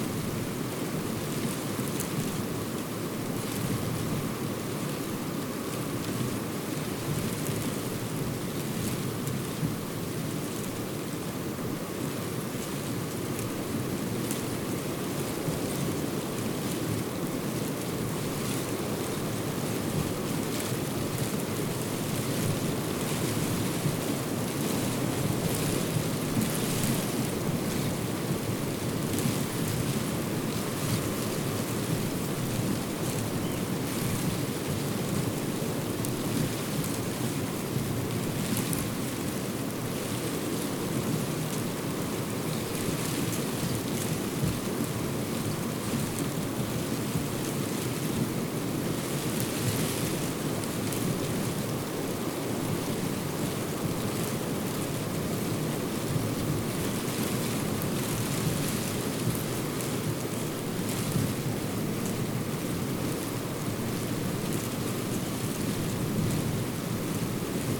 Wind in the marram grass at Newborough / Niwbwrch, recorded with a Zoom H4n recorder and Rode wind muff and tripod. Bass cut EQ applied.
Llanfairpwllgwyngyll, UK